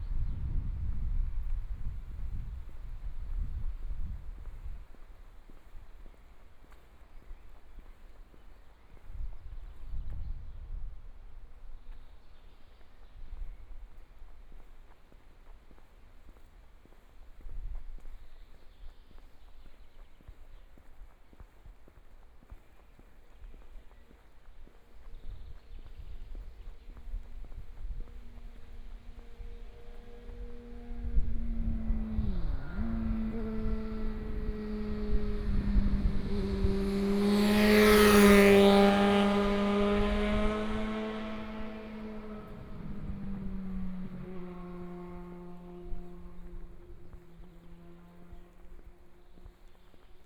walking on the Road, Traffic Sound, Birdsong
Germany, 11 May 2014, 16:01